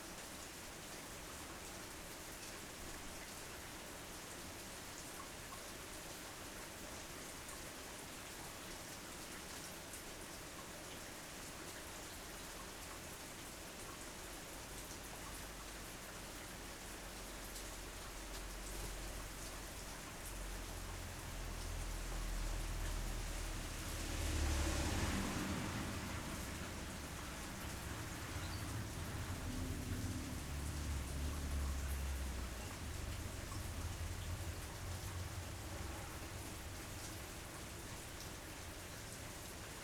Under the shed .... in a thunderstorm ... recorded with Olympus LS 11 integral mics ... the swallows had fledged that morning and left the nest ... an approaching thunderstorm arrived ... lots spaces in the sounds ... both birds and thunderclaps ... bird calls from ... song thrush ... collared dove ... background noise and traffic ...